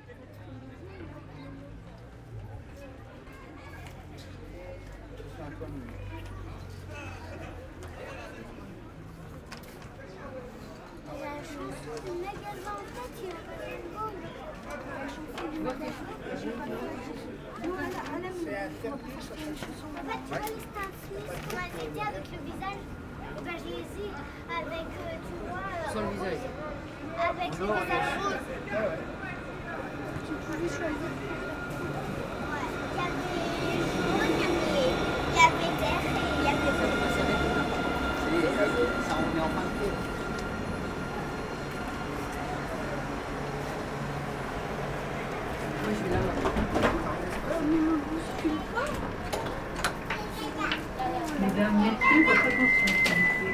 Recording of the tramways passing by in the Anatole France station, and noisy young people playing nearby.